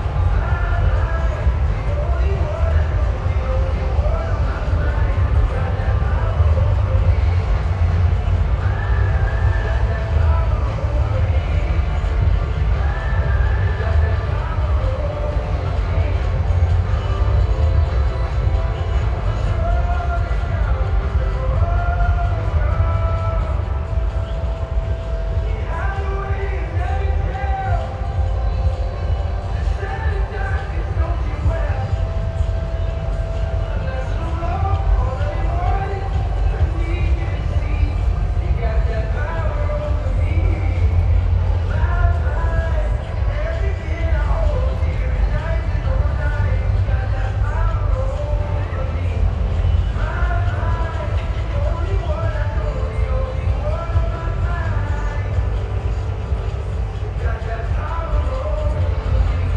Fun Fair, Park Altánek Stromovka - Fun Fair at night
At night from the border of the fun fair, just behind the fence, musics are mixing together.
Recorded by an ORTF setup Schoeps CCM4 x 2 on a Cinela Suspension + Windscreen
Sound Devices mixpre6 recorder
GPS: 50.107878,14.425690
Sound Ref: CZ-190302-009
Praha, Czechia